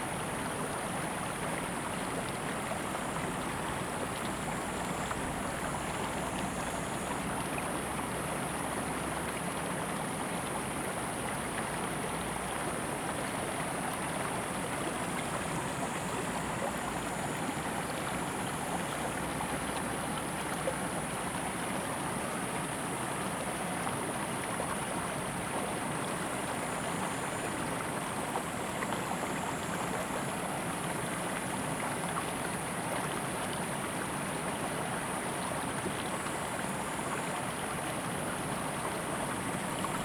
白鮑溪, Shoufeng Township - Streams of sound
Streams of sound, Very hot weather
Zoom H2n MS+XY
Hualien County, Taiwan, 28 August